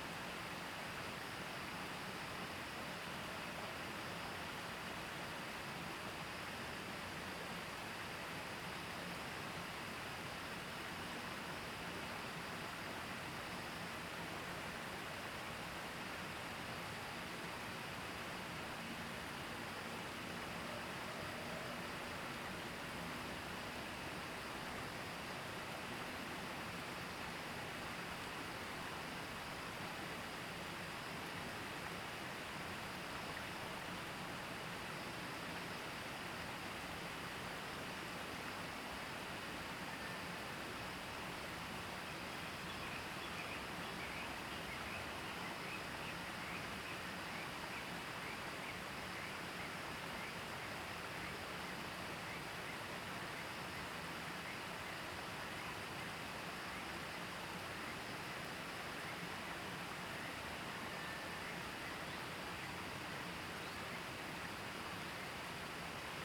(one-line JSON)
{"title": "茅埔坑溪, Nantou County - Stream", "date": "2015-04-30 06:04:00", "description": "Bird calls, Stream sound, Chicken sounds\nZoom H2n MS+XY", "latitude": "23.94", "longitude": "120.94", "altitude": "470", "timezone": "Asia/Taipei"}